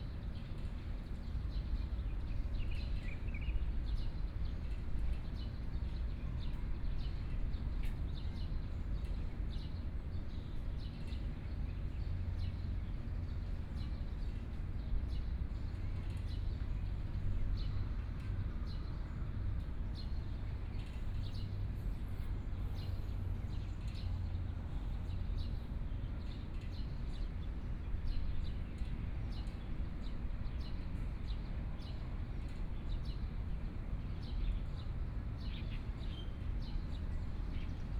{"title": "二二八和平公園, Kaohsiung City - Noon in the park", "date": "2014-05-14 11:56:00", "description": "Noon in the park, Hot weather, Birds", "latitude": "22.63", "longitude": "120.29", "altitude": "4", "timezone": "Asia/Taipei"}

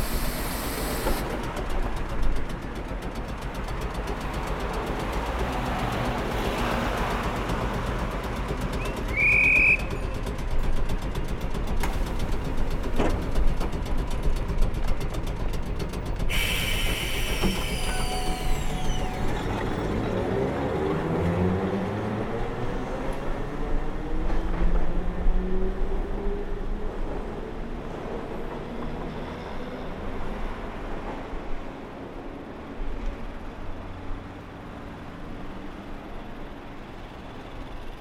{"title": "Tanigawachō, Higashiyama Ward, Kyoto, Japon - Tramway de Kyoto", "date": "1997-05-16 10:00:00", "description": "Kyoto Japon\nle tramway (aujourd'hui disparu)", "latitude": "35.01", "longitude": "135.79", "altitude": "64", "timezone": "Asia/Tokyo"}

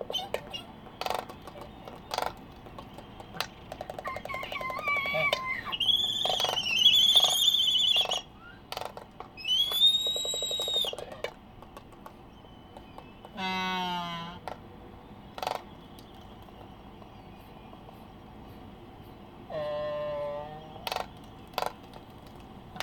{"title": "United States Minor Outlying Islands - Laysan albatross dancing ...", "date": "1997-12-25 14:00:00", "description": "Sand Island ... Midway Atoll ... laysan albatross dancing ... background noise from voices ... carts ... a distant fire alarm ... Sony ECM 959 one point stereo mic to Sony Minidisk ...", "latitude": "28.22", "longitude": "-177.38", "altitude": "9", "timezone": "Pacific/Midway"}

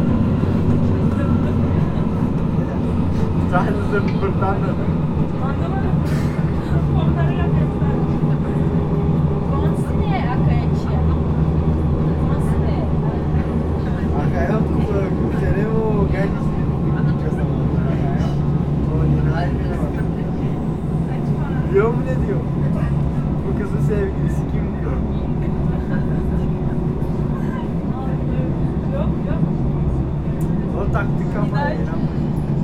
{"title": "Neuwiedenthal, Hamburg, Deutschland - The S3 Buxtehude and stade train", "date": "2019-04-19 19:00:00", "description": "The train going to Neuwiedenthal on evening. Some turkish people talking loudly and an angry woman with a bike.", "latitude": "53.47", "longitude": "9.88", "altitude": "9", "timezone": "Europe/Berlin"}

